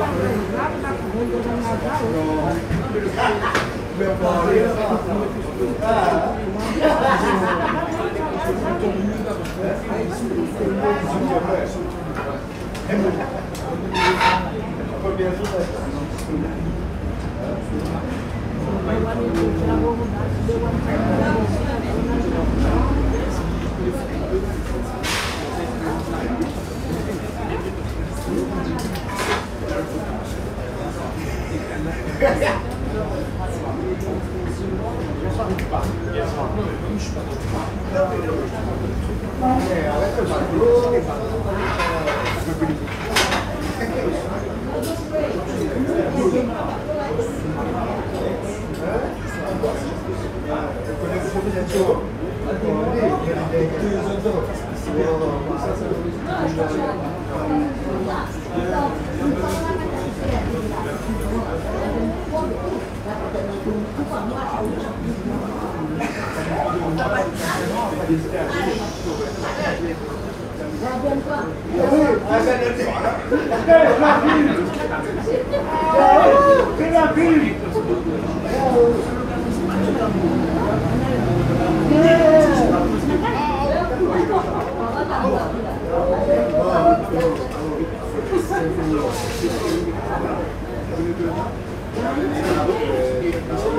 place du marché couvert
Snack Bar Animé Conversations-Vaisselle-Chaises_St Denis 10H